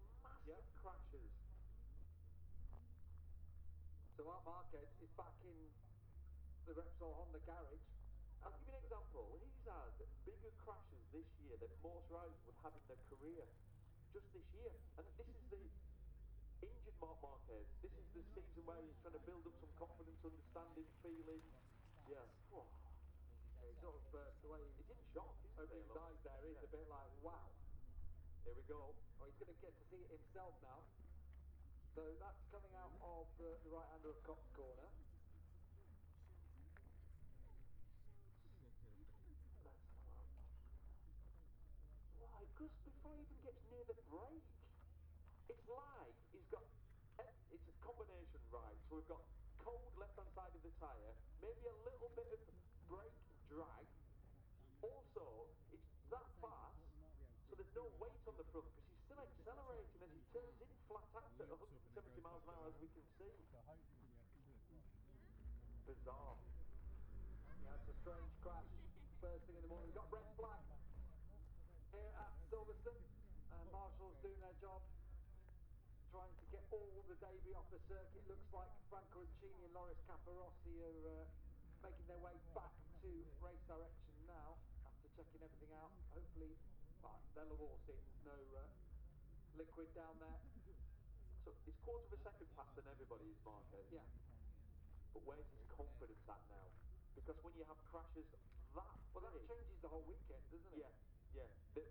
Silverstone Circuit, Towcester, UK - british motorcycle grand prix 2021 ... moto grand prix ...
moto grand prix free practice one ... maggotts ... olympus ls 14 integral mics ...